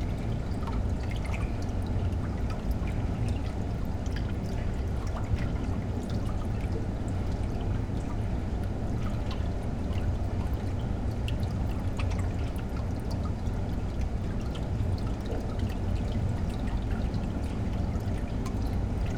Reading Waterworks, Fobney Lock Reading UK - Inside the old Victorian pumping station at Fobney Lock
It's a beautiful old brick-built Victorian pumping station on the Kennet and Avon canal just outside Reading. It's roof went into disrepair a few years ago but a new one was put in place with stories of turning it into a canal-side cafe. The door was locked and double bolted, but that didn't last long..Now you can gain access, and this is one of the lovely soundscapes that greets your ears. Sony M10
South East, England, United Kingdom, 1 February 2020, ~11:00